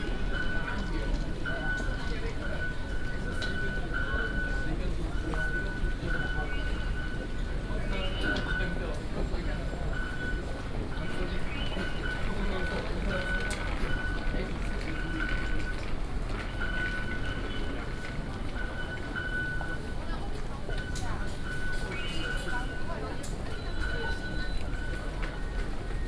Taipei Main Station, Taipei city, Taiwan - At the station
At the station, Three different transportation systems
Zhongzheng District, 台北車站(東三)(下客), 23 March, 08:41